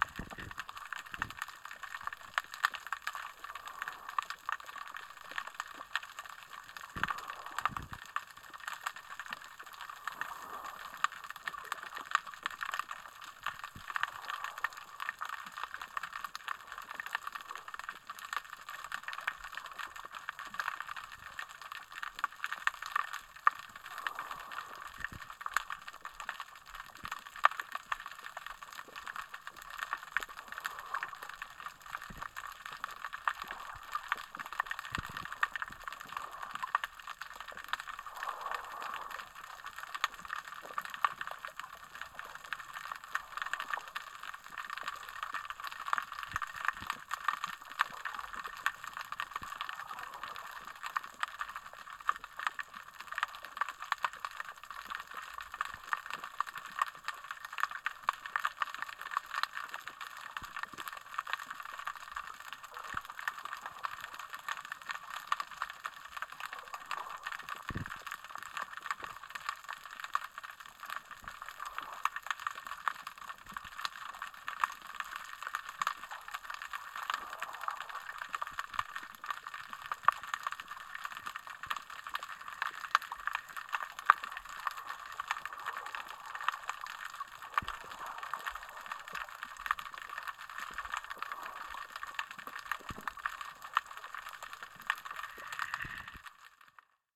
Juan-les-Pins, Antibes, France - Listening underwater
This is the sound underwater in a bay in Antibes where we snorkelled and swam. I don't know what all the tiny popping, crackling sounds are? Perhaps they are the sounds of tiny underwater creatures making bubbles under the water... barnacles perhaps? It sounds very alive and I think the splish at some point in the recording was made by a fish. It was lovely to stand in the sea at night and eavesdrop on all the life beneath its surface in the dark. Recorded in mono with just one hydrophone plugged into EDIROL R-09. Apologies for the handling noise when the tide dragged the microphone cable around, but editing this sound out ruined the rhythm of the waves, so I thought better to leave it in.
Provence-Alpes-Côte-d'Azur, France métropolitaine, European Union